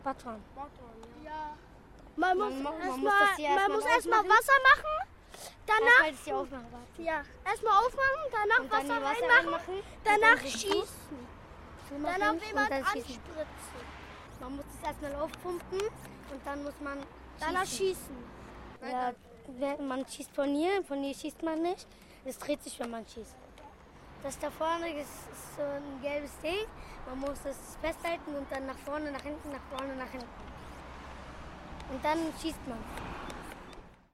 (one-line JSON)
{"title": "how to use a waterpistol", "date": "2011-04-26 11:02:00", "description": "kids talking about their waterpistols", "latitude": "52.55", "longitude": "13.38", "altitude": "41", "timezone": "Europe/Berlin"}